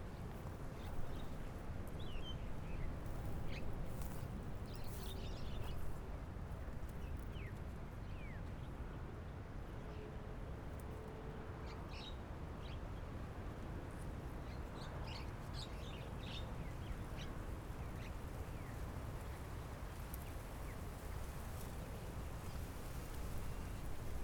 Taitung City - At the beach
At the beach, Sound of the waves, Birds singing, Dogs barking, The distant sound of an ambulance, Aircraft flying through, Zoom H6 M/S, +Rode Nt4
Taitung City, Taitung County, Taiwan, 15 January